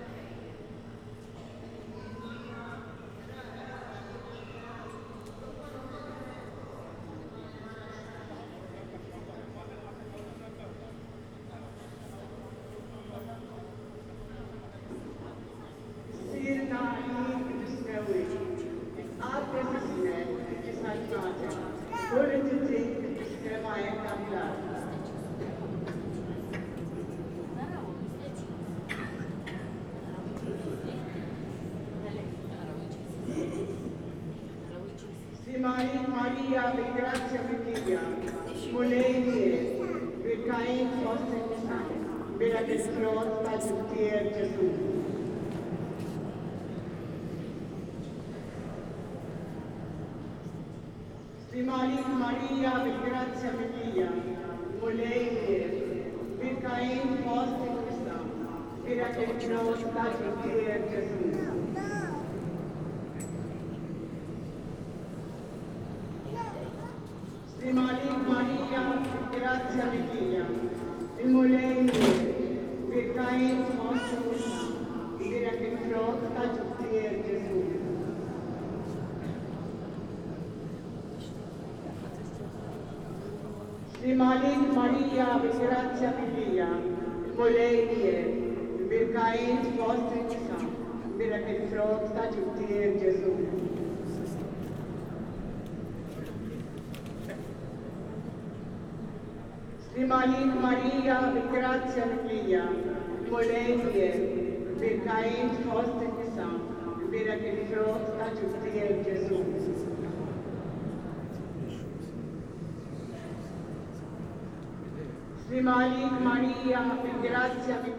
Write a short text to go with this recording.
at the door of the Parish Church dedicated to St Catherine of Alexandria, Misraħ ir-Repubblika, Żejtun. Sound from inside and outside the church, during a street procession. (SD702, DPA4060)